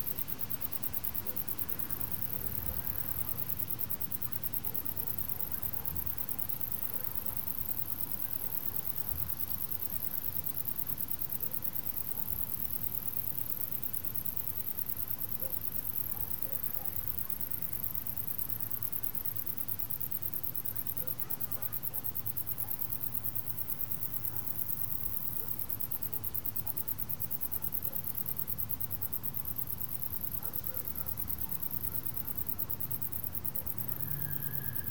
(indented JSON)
{
  "title": "Poznan, Morasko, field road - panned crickets",
  "date": "2013-07-05 16:25:00",
  "description": "crickets on both sides of the field road make a great panned chirp, oscillating in unison. Headphones suggested for this one.",
  "latitude": "52.47",
  "longitude": "16.90",
  "altitude": "101",
  "timezone": "Europe/Warsaw"
}